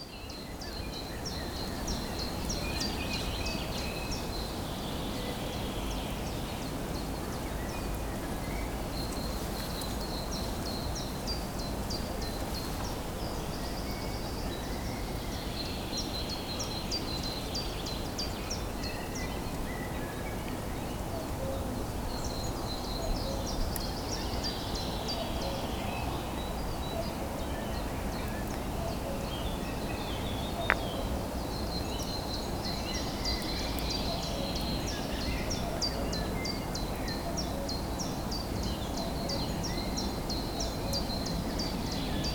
Ambience in the forest at a pool of water created by beaver dams placed on a small stream. Very serene place. Lots of different birds chirping as well as frog croak. Rustle of dry rushes on the pond. (roland r-07)